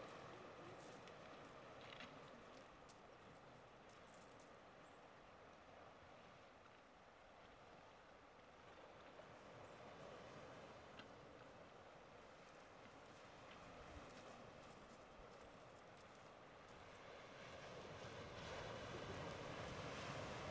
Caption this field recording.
The wind, the sea, people coming down to the beach looking at the huge castle on the rock. Very quiet and meditative place. Rec with Tascam DR-05 on the cliff in front of the sea.